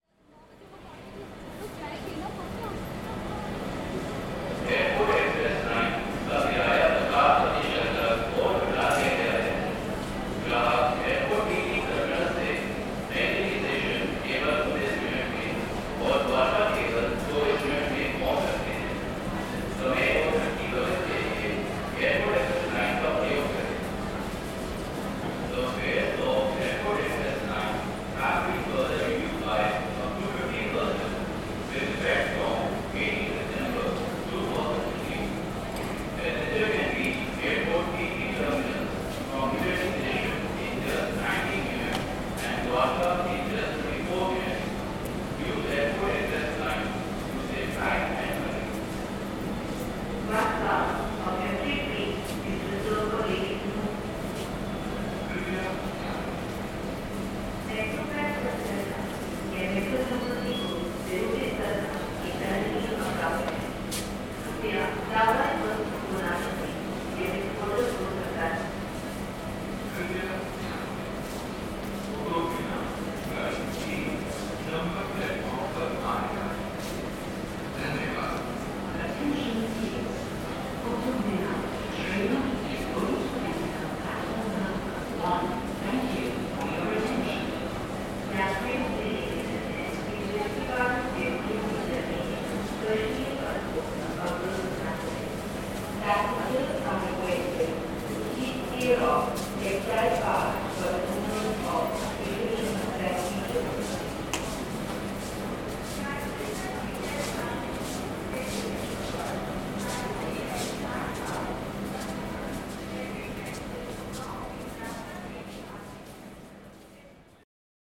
Rajiv Chowk, Connaught Place, New Delhi, India - (-33) Rajiv Chowk Metro Station
Rajiv Chowk Metro Station; platform announcements
sound posted by Katarzyna Trzeciak
6 February 2016